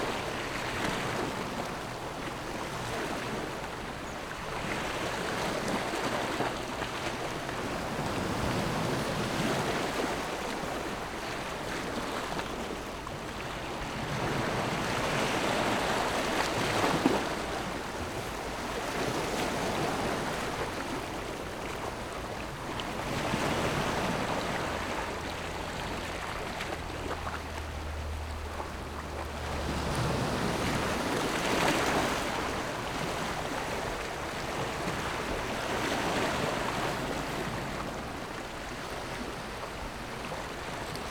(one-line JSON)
{"title": "鐵板, Nangan Township - Sound of the waves", "date": "2014-10-14 13:49:00", "description": "At the beach, Sound of the waves\nZoom H6 +Rode NT4", "latitude": "26.14", "longitude": "119.92", "altitude": "13", "timezone": "Asia/Taipei"}